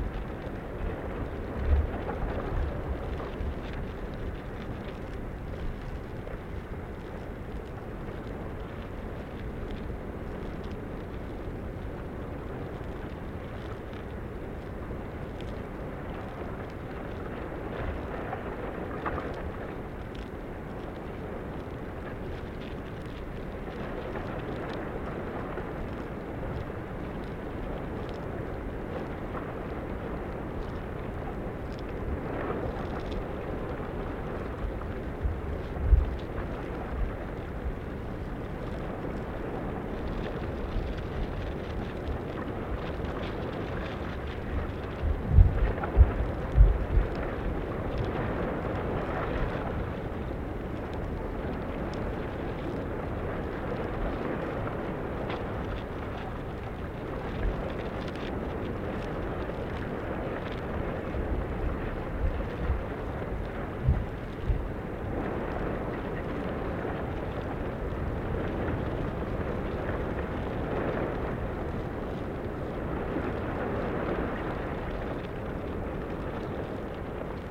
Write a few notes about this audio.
two hydrophones burried in dunes sand. windy day.